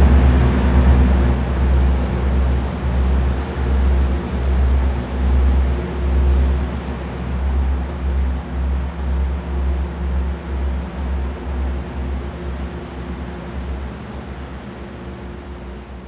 Montreal: Lachine Canal: Through the St. Catherine Lock - Lachine Canal: Through the St. Catherine Lock
This is a condensed piece that comes from a 25-minute recording of a ship passing through the St. Catherine lock of the St. Lawrence Seaway. These are the highlight sounds of the ship going from high to low water, recorded on May 15, 2003. The ships of the Seaway are larger than those in the former Lachine canal, but their movement through the lock is similar.
Sainte-Catherine, QC, Canada, 15 May 2003